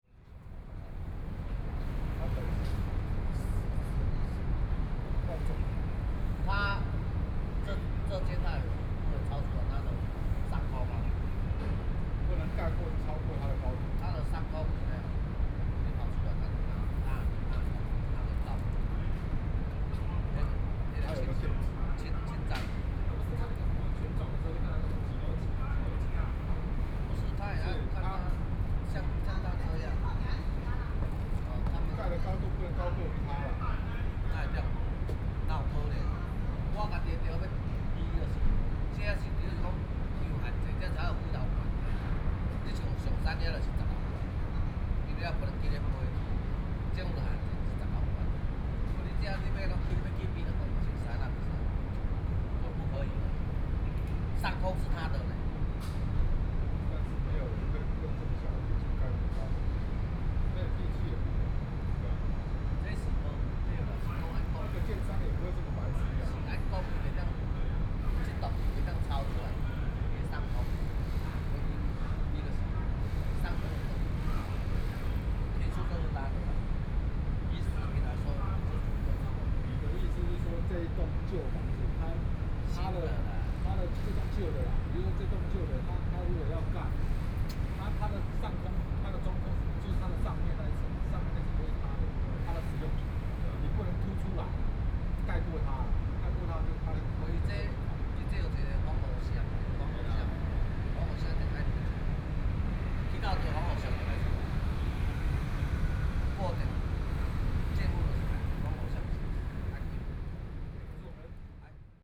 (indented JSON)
{
  "title": "ZhongAn Park, Taipei City - Sitting in the Park",
  "date": "2014-05-05 15:08:00",
  "description": "Sitting in the Park, Traffic Sound, The sound of a group of chefs chatting, Environmental Noise",
  "latitude": "25.06",
  "longitude": "121.52",
  "altitude": "16",
  "timezone": "Asia/Taipei"
}